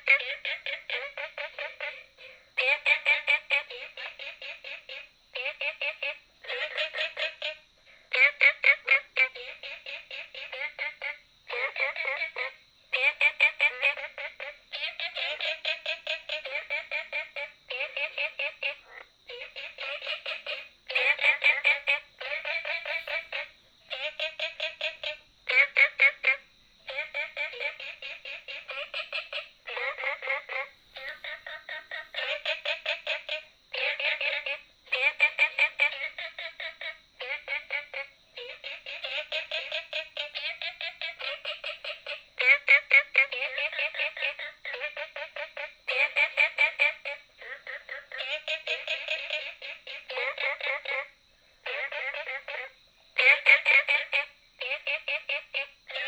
綠屋民宿, 桃米里 Taiwan - Frogs sound
Frogs sound
Binaural recordings
Sony PCM D100+ Soundman OKM II
Puli Township, Nantou County, Taiwan